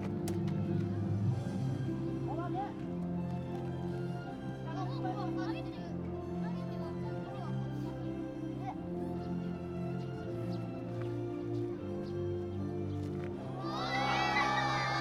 performer entertaining visitors, showing juggling tricks. recorded behind his speaker so his voice is muffled and remote in contrast to the crisp voices of the crowd.
近畿 (Kinki Region), 日本 (Japan), 30 March, 18:27